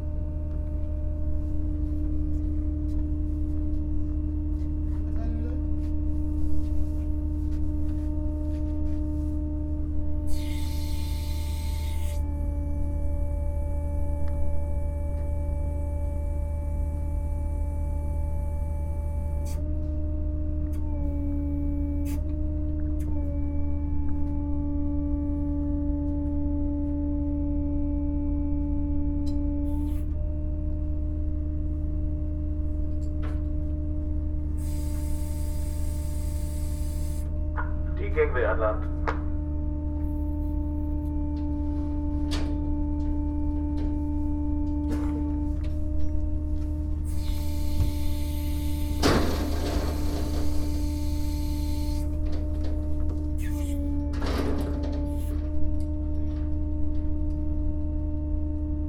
{"title": "helgoland südhafen - funny girl läuft aus", "date": "2014-02-11 16:10:00", "description": "funny girl läuft aus dem helgoländer südhafen aus", "latitude": "54.17", "longitude": "7.90", "timezone": "Europe/Berlin"}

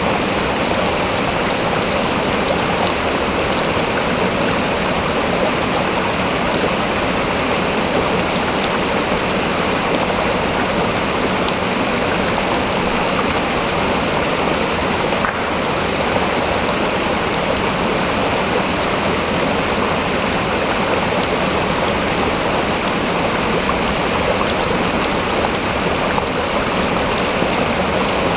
{"date": "2011-07-14 18:58:00", "description": "Florac, La Source du Pêcher", "latitude": "44.32", "longitude": "3.59", "altitude": "568", "timezone": "Europe/Paris"}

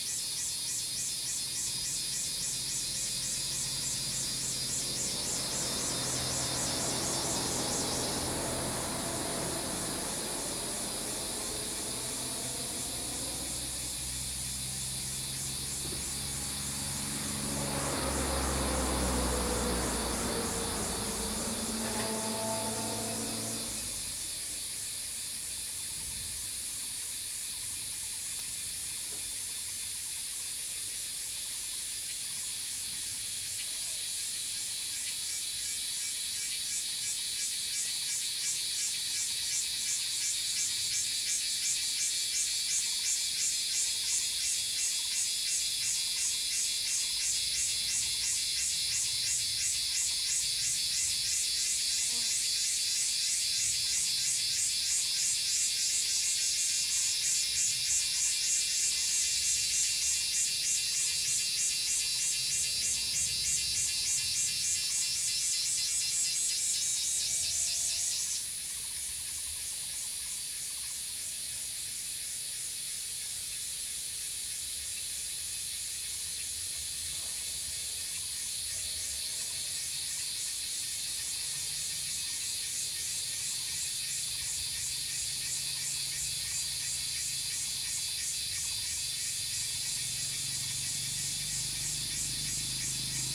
{"title": "種瓜路, 桃米里, 埔里鎮 - Cicadas sound", "date": "2016-06-07 09:55:00", "description": "Cicadas cry, Traffic Sound, Bird sounds\nZoom H2n MS+XY", "latitude": "23.95", "longitude": "120.91", "altitude": "598", "timezone": "Asia/Taipei"}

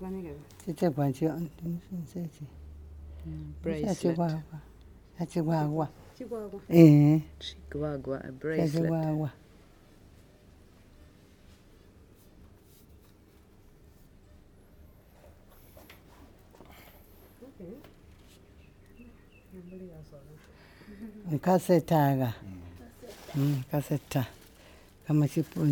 {"title": "BaTonga Museum, Binga, Zimbabwe - Janet and Luyando - colours of beets...", "date": "2012-11-12 11:40:00", "description": "…. during our following conversation, Janet is putting on various artifacts and parts of a bride’s beets costume while she’s explaining and telling stories of rituals and customs.… towards the end of this long real-time take, she mentions also the women’s custom of placing red beets on the bed, indicating to her husband that she’s in her menstrual cycle … (this is the image that you can hear the painter Agness Buya Yombwe in Livingstone refer to…)\n(in ChiTonga with summary translations)", "latitude": "-17.62", "longitude": "27.35", "altitude": "609", "timezone": "Africa/Harare"}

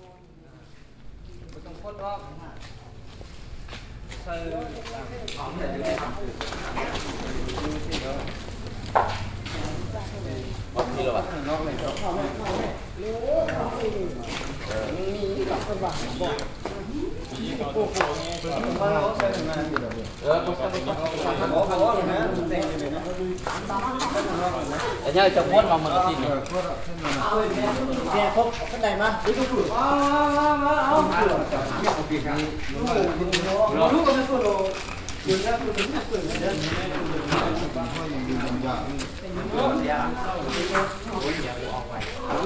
{"date": "2009-04-20 15:17:00", "description": "Luang Prabang, Wat Xieng Thong, workers", "latitude": "19.90", "longitude": "102.14", "timezone": "Asia/Vientiane"}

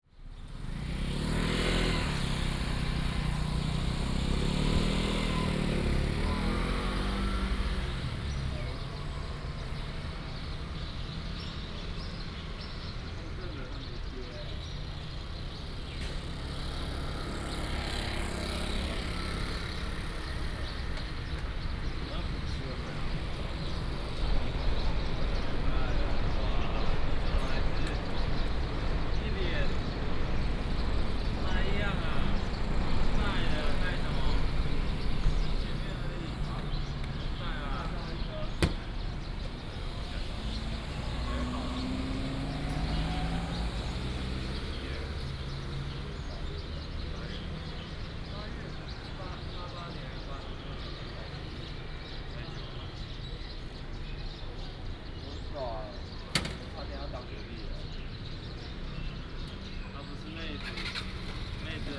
{"title": "Ershui Station, 二水鄉 - Square in the station", "date": "2018-02-15 08:48:00", "description": "In the Square in the station, lunar New Year, Traffic sound, Bird sounds\nBinaural recordings, Sony PCM D100+ Soundman OKM II", "latitude": "23.81", "longitude": "120.62", "altitude": "85", "timezone": "Asia/Taipei"}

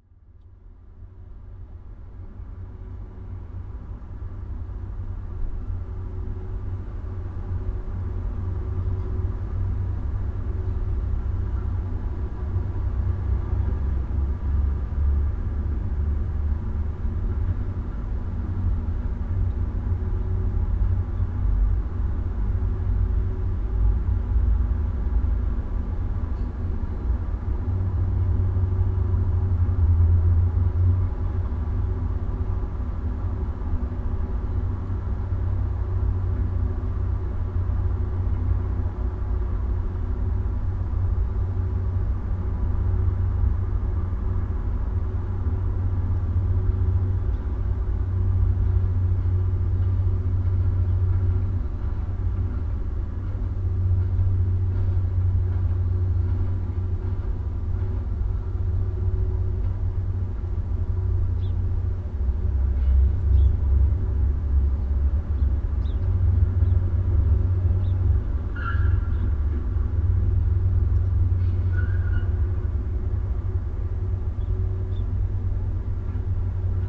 {"title": "Maribor, Slovenia - one square meter: electrical box", "date": "2012-08-29 14:02:00", "description": "atop the concrete wall sits one ruined electrical box, with various holes in the side in which a small microphone can be placed. all recordings on this spot were made within a few square meters' radius.", "latitude": "46.56", "longitude": "15.65", "altitude": "263", "timezone": "Europe/Ljubljana"}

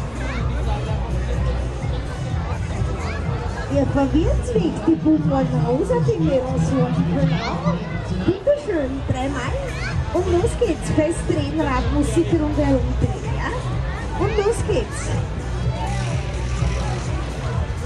{"title": "wien-stadlau, wheel of fortune", "date": "2010-09-24 17:06:00", "description": "wheel of fortune at the stadlauer kirtag annual fair 2010", "latitude": "48.22", "longitude": "16.45", "altitude": "156", "timezone": "Europe/Vienna"}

